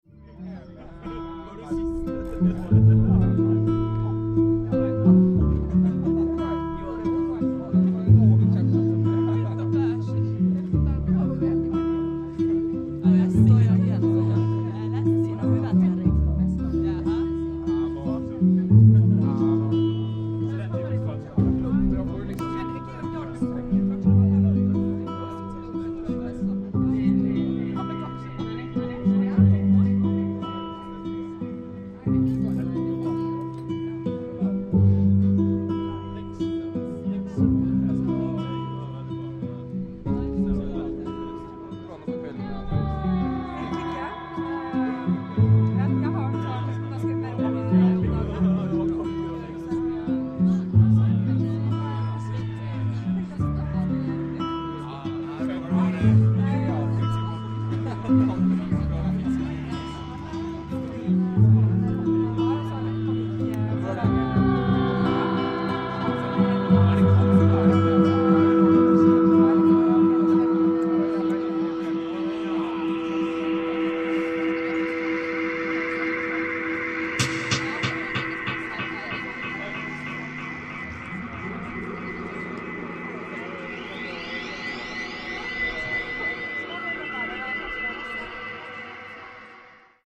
ambience at the opening of the exhibition THE TIME OF DISTANCE at Field gallery, a band played outside on the balkony, soccer training at the other side of the fence.